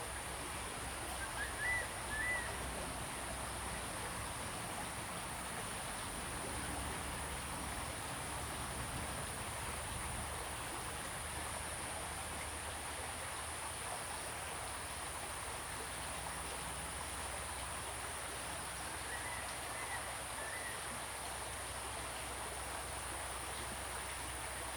中路坑溼地, 桃米生態村 - Bird and Stream
Bird and Stream
Zoom H2n MS+XY